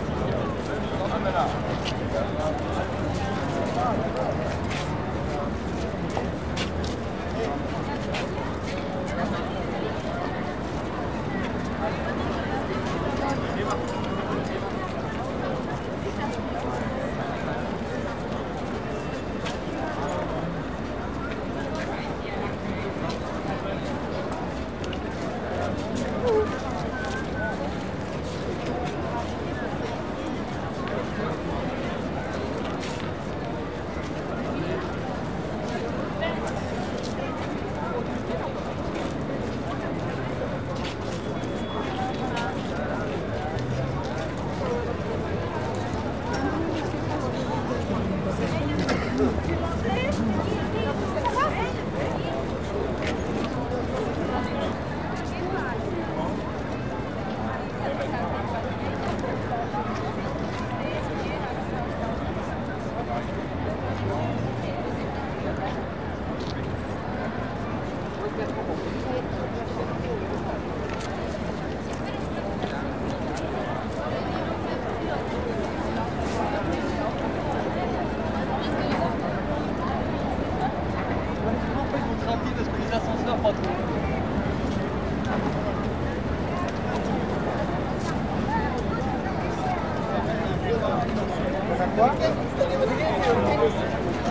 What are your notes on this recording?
international crowd waiting at the lifts of the tower. traffic passing bye. international cityscapes - topographic field recordings and social ambiences